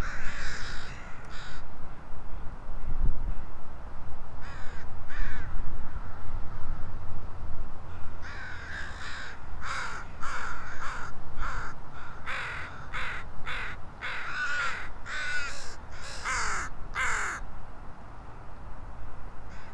District régional de Nanaimo, BC, Canada - Crows's tavern
When I arrived at the Crows's Tavern ...
Parksville, BC, Canada